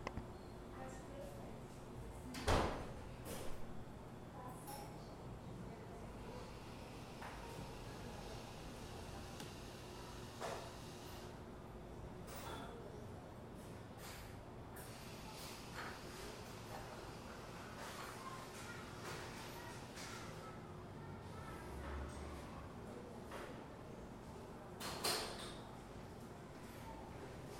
R. Sebastião Coco - Nova Petrópolis, São Bernardo do Campo - SP, 09771-070, Brasil - Coffee Shop
This is a Coffee Shop called Book e Café where is located next an elementary school. You can listen to kids playing around sometimes. It was recorded by a Tascam DR-05 placed on a table next a big glass window.